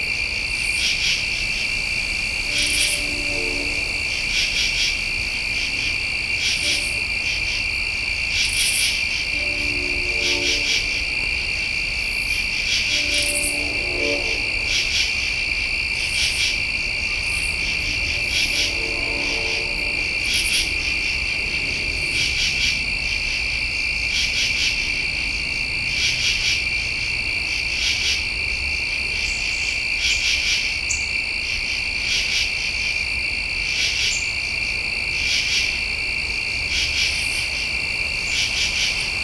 night insects in my mothers yard, abington, ma
insects and a distant train recorded in the side yard of my mothers house in abington, ma, on the south shore outside of boston
MA, USA, 18 September